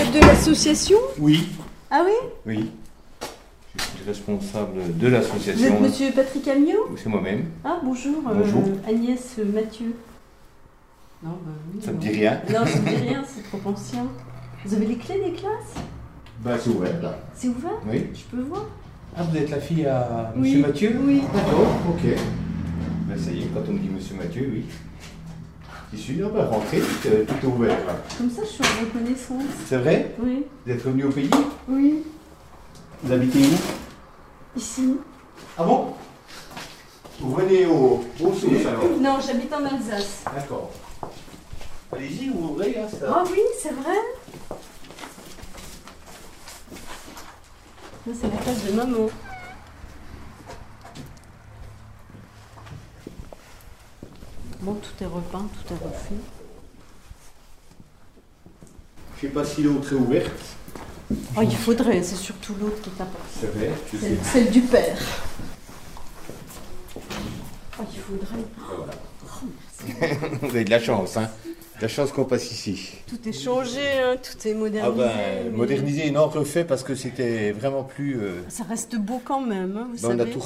Agnès - Salle de classe / Travexin, France - Agnès salle de classe
Agnès revient dans l'ancienne école, lieu de son enfance.
Dans le cadre de l’appel à projet culturel du Parc naturel régional des Ballons des Vosges “Mon village et l’artiste”
Cornimont, France, 21 October 2012